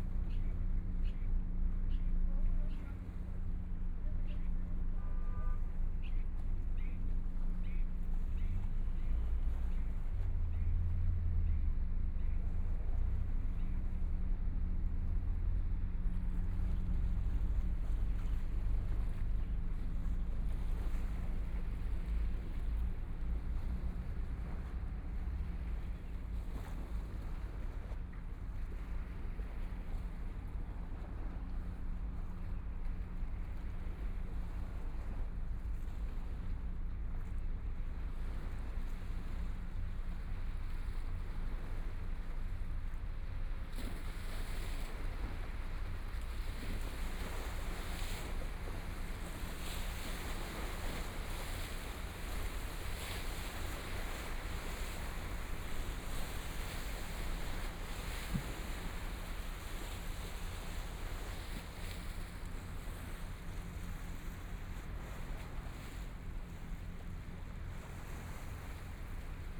{
  "title": "Huangpu River, Shanghai - The sound of the waves",
  "date": "2013-11-28 14:22:00",
  "description": "The sound of the waves, Many ships to run after, Binaural recording, Zoom H6+ Soundman OKM II",
  "latitude": "31.20",
  "longitude": "121.50",
  "altitude": "14",
  "timezone": "Asia/Shanghai"
}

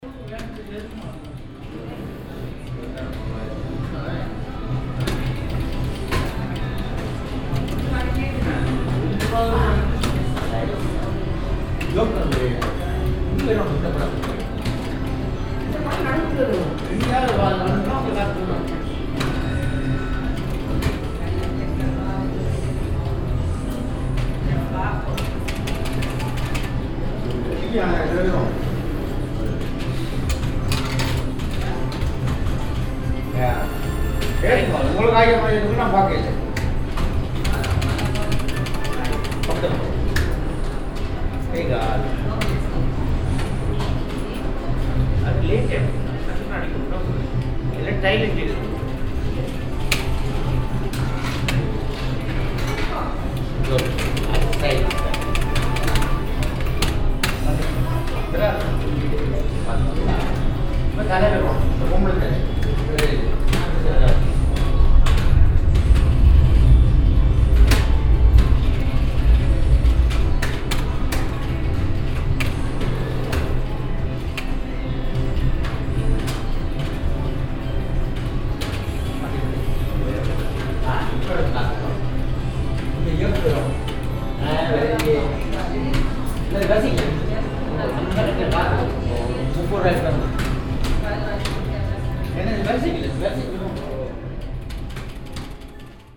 {
  "title": "essen, main station, game hall",
  "date": "2011-06-09 22:10:00",
  "description": "Inside a crowded game hall in the evening. The sounds of different game machines. Comments of the Gambler.\nProjekt - Klangpromenade Essen - topograpgic field recordings and social ambiences",
  "latitude": "51.45",
  "longitude": "7.01",
  "altitude": "85",
  "timezone": "Europe/Berlin"
}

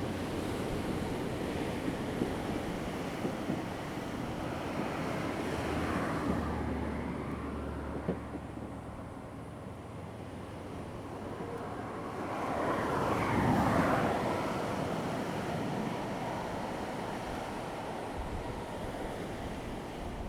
{
  "title": "多良村, Taimali Township - the waves and Traffic Sound",
  "date": "2014-09-05 17:28:00",
  "description": "Sound of the waves, Traffic sound\nZoom H2n MS +XY",
  "latitude": "22.48",
  "longitude": "120.95",
  "altitude": "28",
  "timezone": "Asia/Taipei"
}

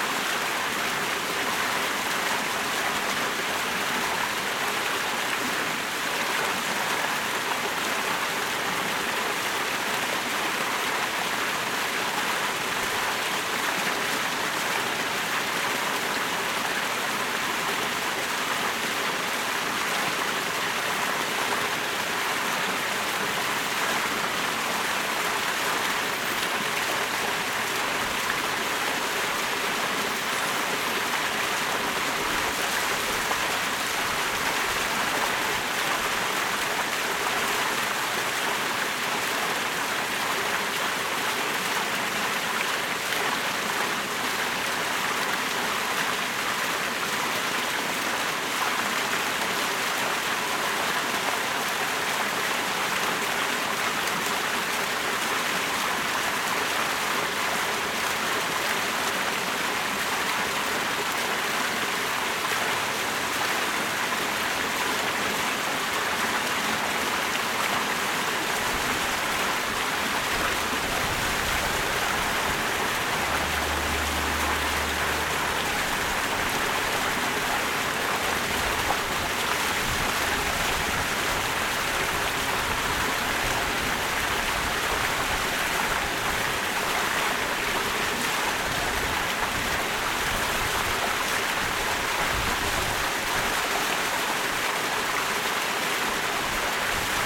{
  "title": "United Nations Plaza A, New York, NY, USA - Small waterfall at United Nations Plaza",
  "date": "2022-08-16 15:06:00",
  "description": "Sounds of water from a small waterfall at 845 United Nations Plaza.",
  "latitude": "40.75",
  "longitude": "-73.97",
  "altitude": "33",
  "timezone": "America/New_York"
}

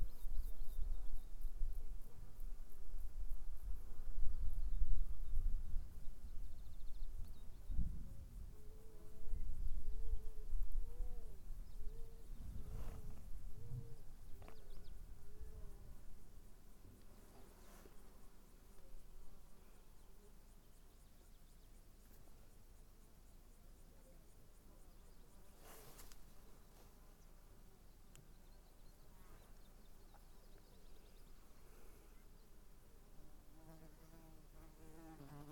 {
  "title": "Тяня, Республика Саха (Якутия), Россия - Summer day near a river in an Evenki village in the Sakha republic",
  "date": "2022-07-13 17:00:00",
  "description": "Recorded with Tascam DR-05X. Sitting near a river. Some insects can be heard",
  "latitude": "59.06",
  "longitude": "119.79",
  "altitude": "191",
  "timezone": "Asia/Yakutsk"
}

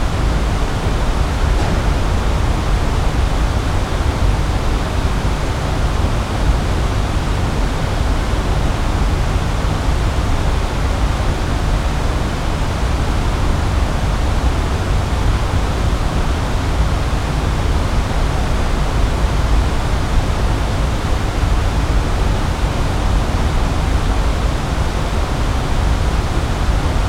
{
  "title": "Brussels, Conrad Hotel, Air Conditionning",
  "date": "2011-12-09 14:27:00",
  "description": "Air conditionning on the ground, inner yard of the hotel.",
  "latitude": "50.83",
  "longitude": "4.36",
  "altitude": "74",
  "timezone": "Europe/Brussels"
}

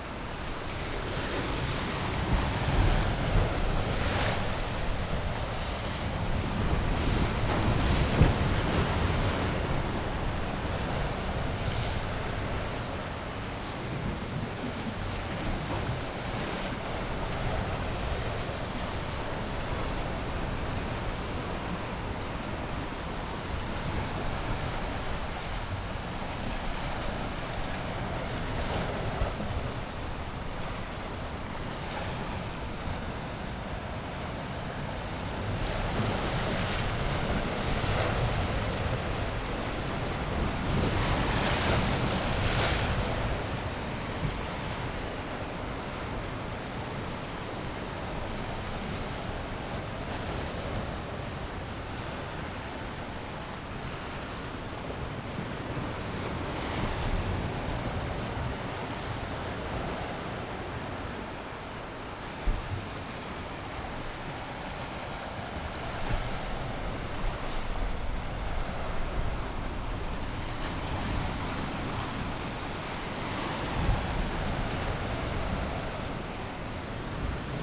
{
  "title": "Henrietta St, Whitby, UK - incoming tide ...",
  "date": "2020-02-07 10:45:00",
  "description": "incoming tide ... lavalier mics on T bar on 3m fishing landing net pole over granite breakwater rocks ...",
  "latitude": "54.49",
  "longitude": "-0.61",
  "altitude": "1",
  "timezone": "Europe/London"
}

